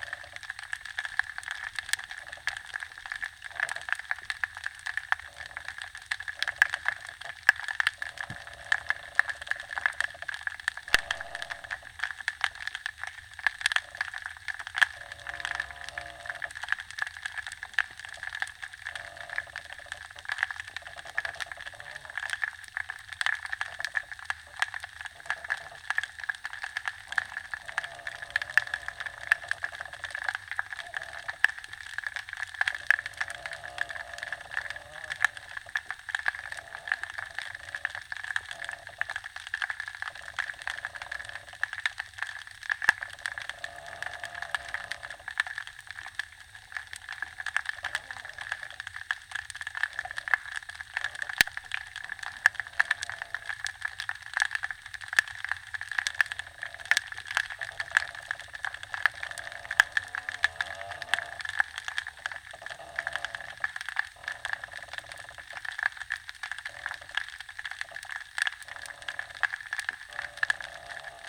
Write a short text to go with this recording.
Wonderful aquatic sounds in this bay. You can hear the creaking of the ferry gently moving by the wharf, and occasionally thunder of the trains on the tracks which is just behind the bay. There is a hissing sound, more so in the right hydrophone, which is not coming from the mics (I had them at equal gain and also the hydrophone hiss doesn't sound like this), I'm not sure what it is.